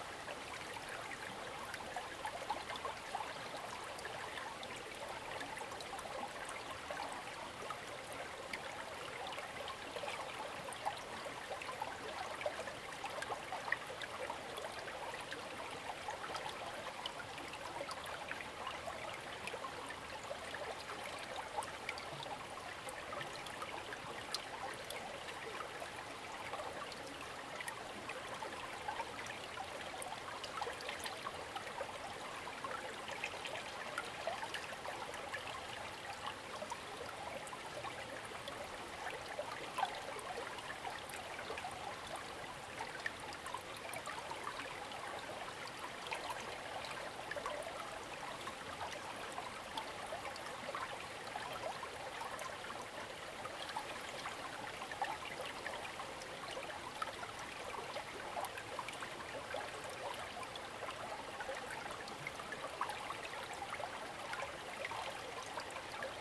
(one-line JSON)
{"title": "成功里, Puli Township, Nantou County - the river", "date": "2016-04-20 14:21:00", "description": "Brook, In the river, stream, traffic sound, birds\nZoom H2n MS+XY", "latitude": "23.96", "longitude": "120.89", "altitude": "454", "timezone": "Asia/Taipei"}